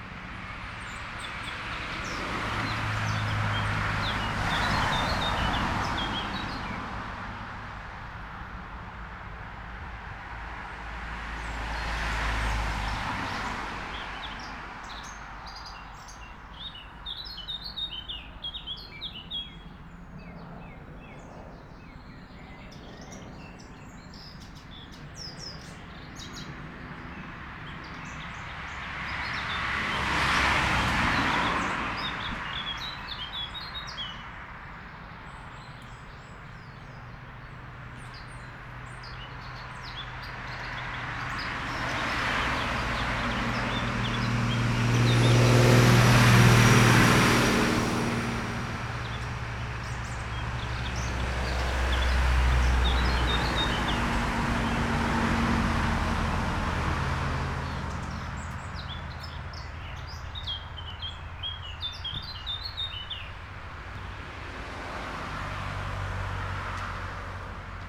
Strzeszyn district, Koszalinska Street - vintage car
Originally wanted to record muffled sounds of a radio playing in a parked car. You can hear a bit a the beginning of the recording. But the traffic was heavy and the person left the car anyway. Kept recording for a minutes. Mainly cars passing by, a few strollers. All of the sudden a vintage car appeared from a forest road - chugging engine and a weird horn. (sony d50)
Poznań-Jeżyce, Poland, 30 May 2018, 10:13